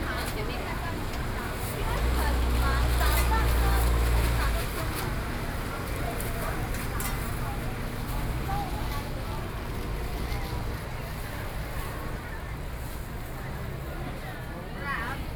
Heping St., Sanxia Dist., New Taipei City - Walking through the traditional market
Walking through the traditional market, Traffic Sound
Binaural recordings, Sony PCM D50
Sanxia District, New Taipei City, Taiwan, 8 July 2012